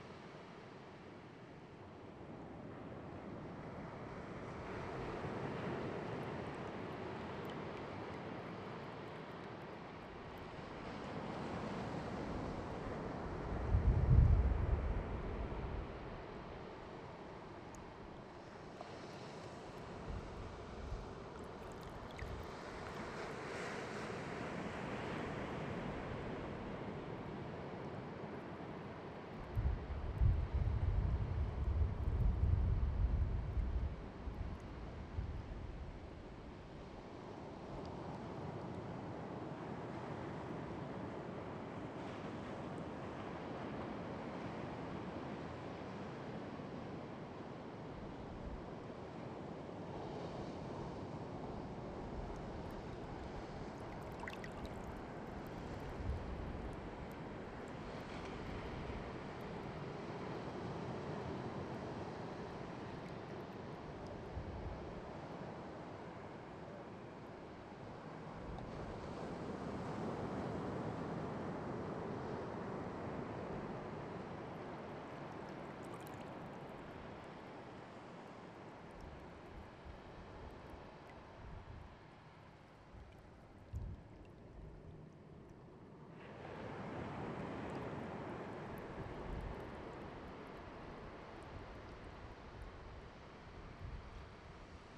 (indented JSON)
{
  "title": "Point Reyes, Drakes beach, Bay Area, California",
  "date": "2010-04-13 05:33:00",
  "description": "creek rushing through a beach and running straight into waves of Pacific",
  "latitude": "38.02",
  "longitude": "-122.97",
  "altitude": "35",
  "timezone": "US/Pacific"
}